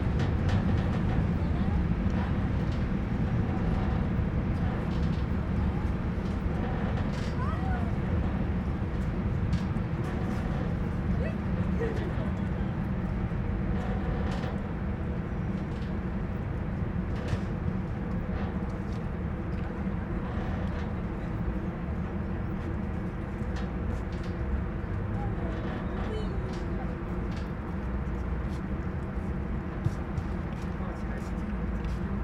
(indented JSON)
{
  "title": "boat dock and passing train, Vienna",
  "date": "2011-08-17 19:20:00",
  "description": "squeaking gate on a boat dock and a passing train behind",
  "latitude": "48.22",
  "longitude": "16.42",
  "altitude": "159",
  "timezone": "Europe/Vienna"
}